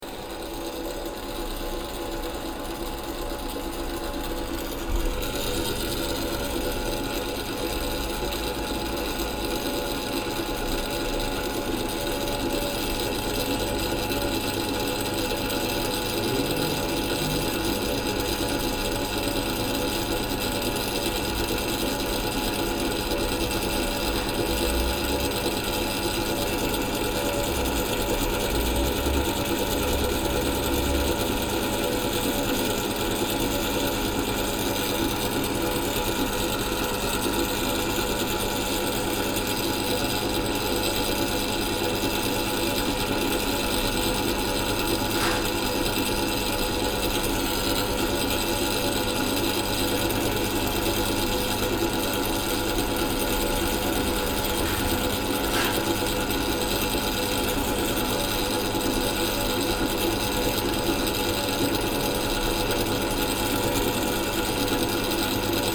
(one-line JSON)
{"title": "Lörick, Düsseldorf, Deutschland - Düsseldorf, Wevelinghoferstr, kybernetic art objects", "date": "2015-04-25 21:29:00", "description": "The sound of kybernetic op art objects of the private collection of Lutz Dresen. Here no.04 another small box here with a rotating fine line geometric form illumintaed with black light.\nsoundmap nrw - topographic field recordings, social ambiences and art places", "latitude": "51.25", "longitude": "6.73", "altitude": "36", "timezone": "Europe/Berlin"}